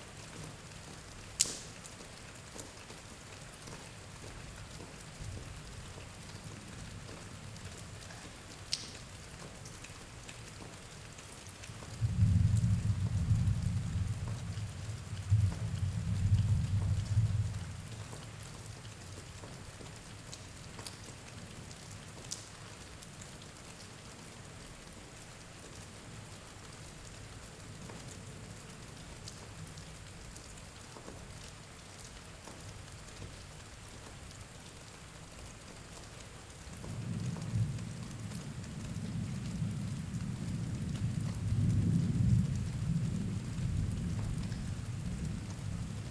sound reflections from the storm outside